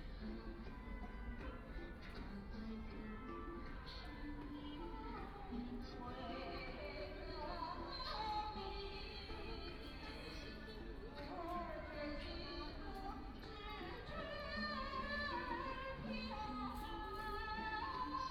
Penglai Park, Huangpu District - Small Square
A group of women dancing music used, Left behind a woman is singing, Binaural recording, Zoom H6+ Soundman OKM II
Shanghai, China, 28 November 2013